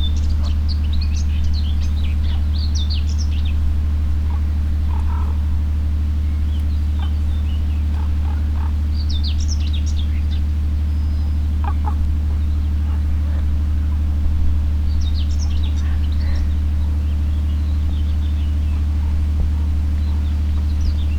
two crows flaying around, looking for their way. the buzz is coming form a big municipal garbage disposal facility.